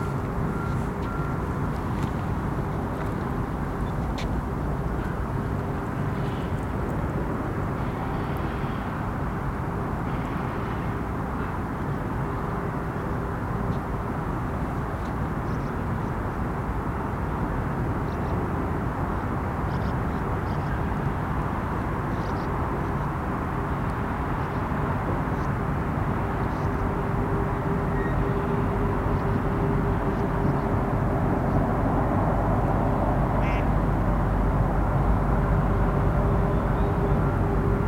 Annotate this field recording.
road traffic from Condell Road behind us, River Shannon ahead. From across the river industrial noise. Jet aircraft passing overhead. Some small propeller aircraft from Coonagh airfield.